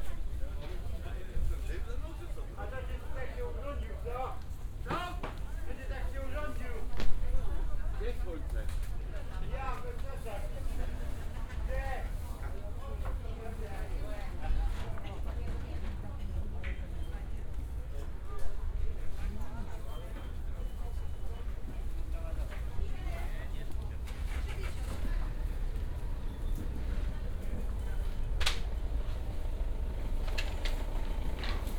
Poznan, Wilda district, Wilda market - before closing time
(binaural) walking around Wilda market on a Saturday afternoon. the place is not busy anymore in this time of the day. almost all vendors are still there but you can sense they are about to close their stands. already sorting things to pack while serving last customers. (Luhd PM-01 into sony d50)
Poznań, Poland, 12 September 2015